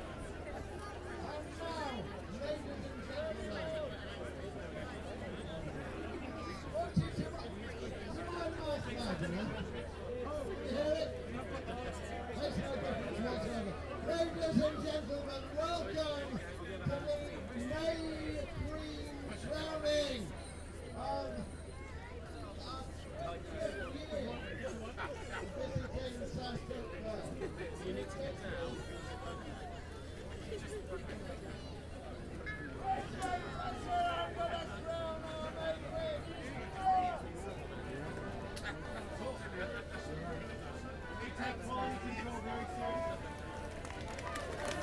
The Street, South Stoke, UK - Crowning the May Queen
This is the sound of the Kennet Morris Men and the children of South Stoke Primary School crowning the May Queen and officially declaring the beginning of the summer.